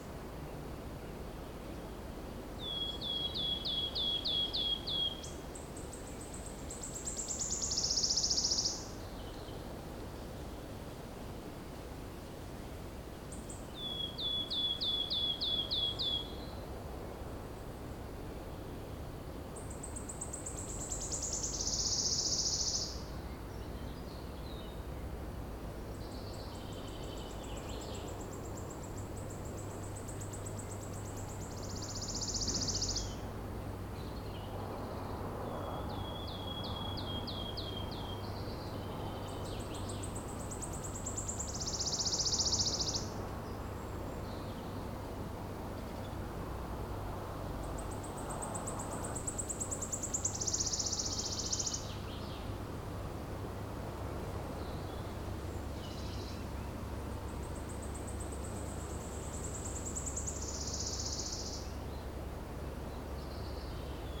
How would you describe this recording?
Le paysage sonore en sortant de la grotte de Banges, un pouillot siffleur en solo, grand corbeau, un peu de vent dans les feuillages et les bruits de la route des Bauges.